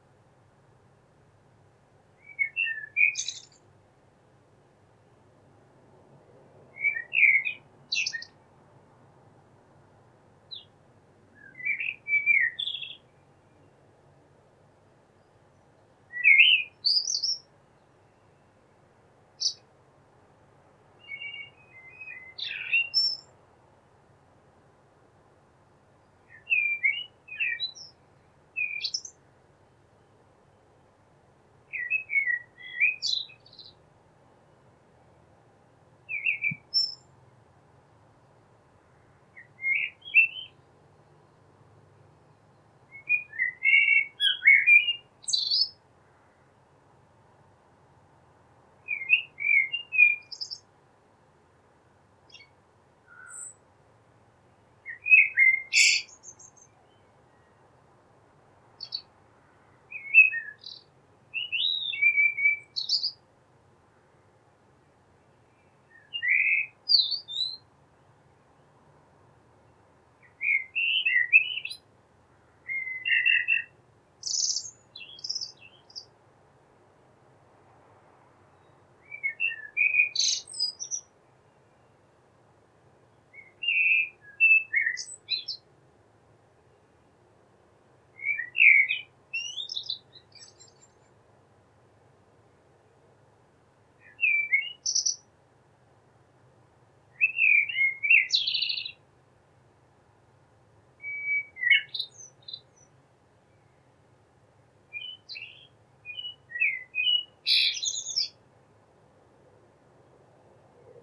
Lambert St, Skipton, UK - Hr Sort
Hr Sort, aka Mr Black, is a very common nick name for the local blackbird in Denmark.